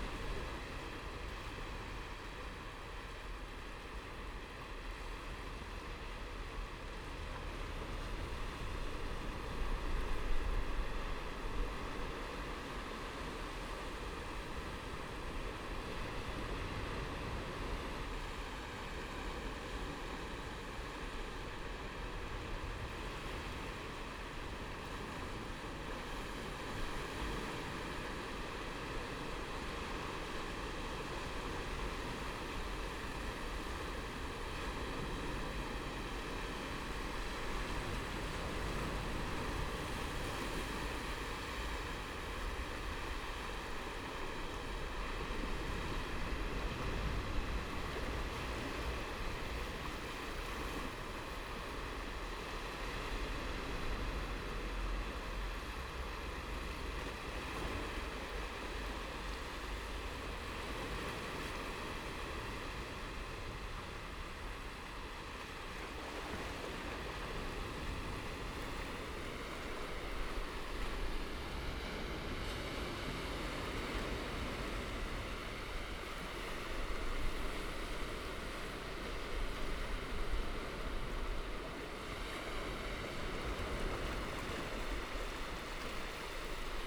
美崙溪, Hualien City - Sound of the waves
Sound of the waves
Binaural recordings
Zoom H4n+ Soundman OKM II + Rode NT4